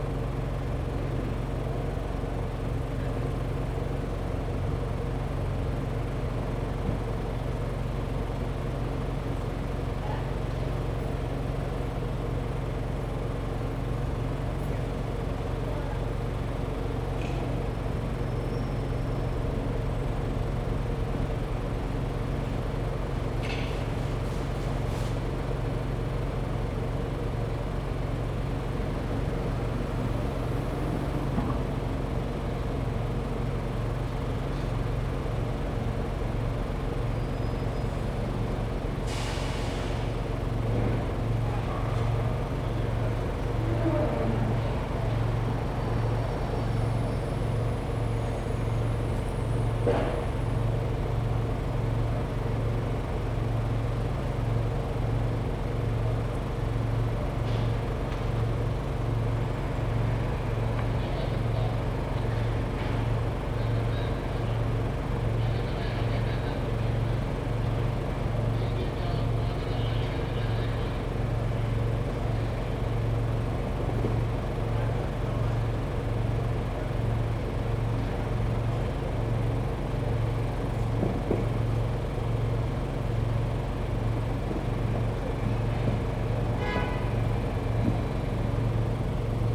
Lower East Side, New York, NY, USA - Early morning Stanton St
Early morning activity, 5am, Stanton St NYC.
13 April 2015